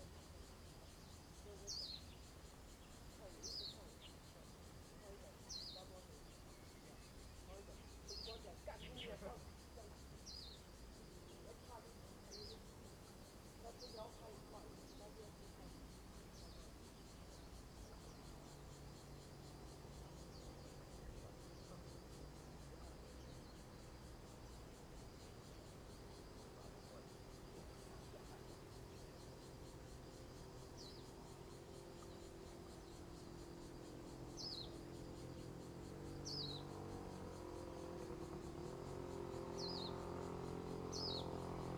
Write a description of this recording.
Birdsong, Traffic Sound, Aircraft flying through, The weather is very hot, Train traveling through, Zoom H2n MS +XY